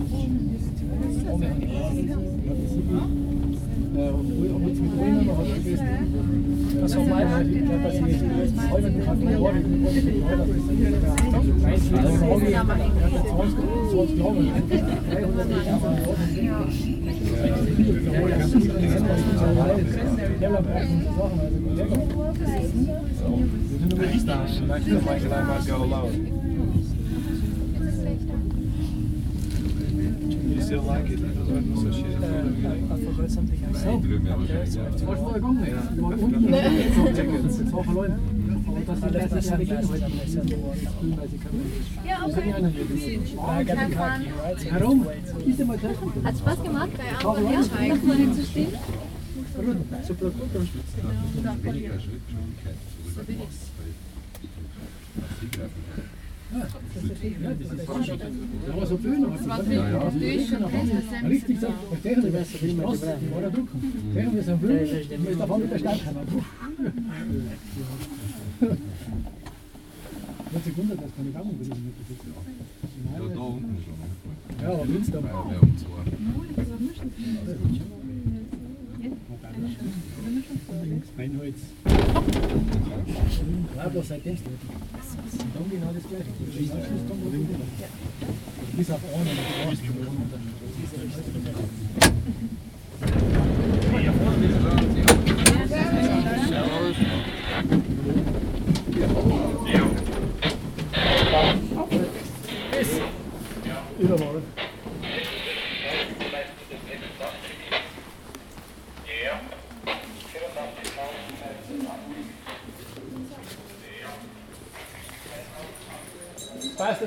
Kochel am See, Deutschland - Talfahrt mit der Herzogstand Seilbahn - in the cable car
Kurz vor Sonnenuntergang. In der Kabine dicht gedrängt Menschen aus verschiedenen Ländern. Fahrtgeräusch, Stimmengewirr, Jackenrascheln, gemeinsames Stöhnen beim Überqueren der Seilbahnstütze. Warten und Öffnen der Türen. Verabschieden. Ausgang
Descent with the Herzogstand cable car shortly before sunset. In the gondola crowded people from different countries. Riding noise, babble of voices, rustling jackets, moaning together when crossing the cable car support. Waiting and opening the doors. Goodbye. Exit.
Germany